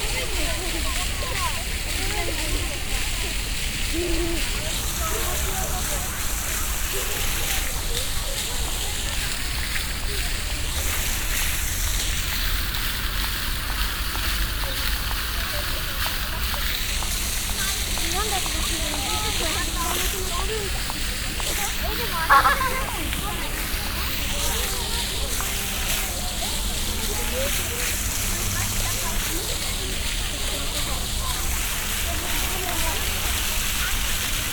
yokohama, fountain at stadium
A water fountain close to the baseball stadium. The sound of the water spraying unregular in the early morning wind and then a bigger crowd of school pupils passing by two by two.
international city scapes - social ambiences and topographic field recordings